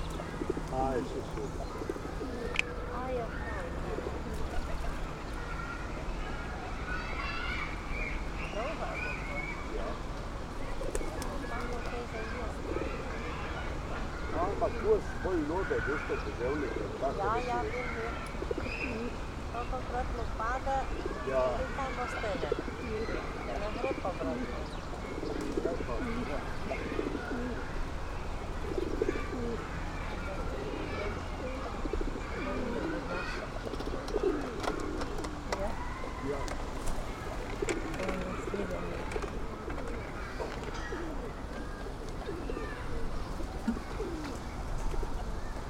Mostiček nad Kornom, Nova Gorica, Slovenija - Pogovor ob pticah
Two older folks talking about the birds surrounding them.